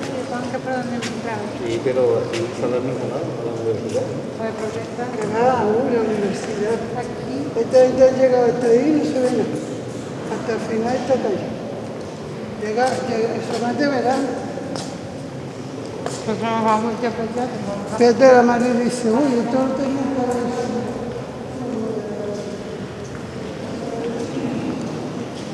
Catedral, Salamanca, Espanha, Catedral ambient
Salamanca_Cathedral, people, spanish, kids, birds, interiors ressonace